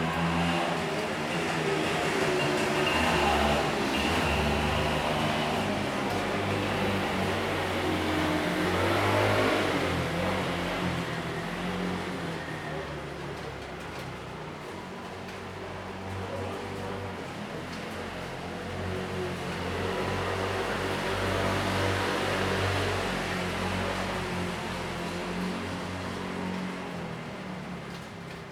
Daren St., 淡水區, New Taipei City - Fire engines
Traffic sound, Fire engines, Wet and cold weather
Zoom H2n MS+XY